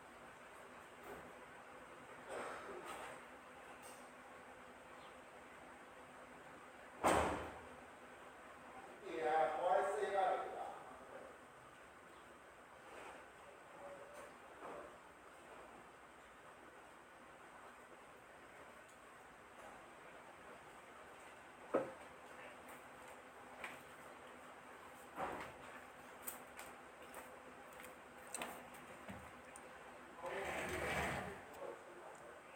place:
Where I live with my wife, people here is nice; the main street 大埔路(Da-pu Rd.) have most stores which provide our living, includes post office and 7-11.
But the site I take this recording, which is my rented house, doesn't have any stores in the community, and very, very quiet, that you can heard it from the recording I take.
recording:
Don't have much sounds, except someone is ready to drive and argue with his wife's door slang of the car, or riding on the motorcycle which is popular down here(Taiwan).
situation:
A carless morning, and it's just few people outside go for job or school, most of the people nearby is staying home doing their business, which is sleep taking, house work doing, or net-surfing through the smart phone.
臺灣, 18 September, ~07:00